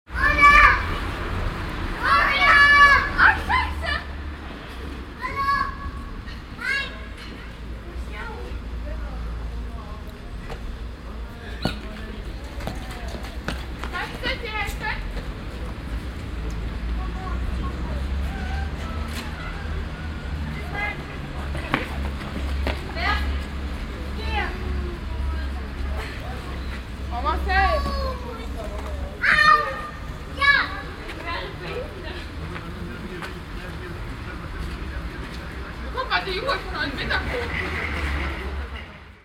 children shouting and playing at the main street in the early afternoon
project: :resonanzen - neanderland - soundmap nrw: social ambiences/ listen to the people - in & outdoor nearfield recordings, listen to the people
3 July 2008, 13:55